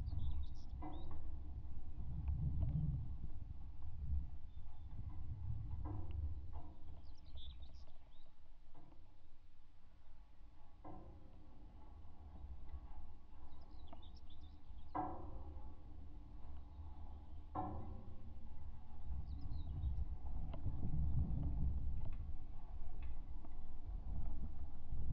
Nida, Lithuania, pole at airport
abandoned Nida airport. a pole of broken wind direction meter. the recording is a mix from contact and omni microphones
May 2018